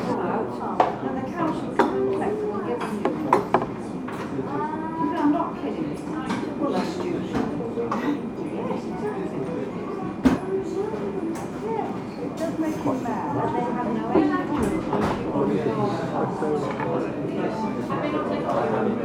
Street sounds with a guitarist busker then into a busy cafe for lunch. The coffee machine is in front and people at tables all around mostly on the left. There is some gentle low cut applied due to noisy fans.
MixPre 6 II with two Sennheiser MKH 8020s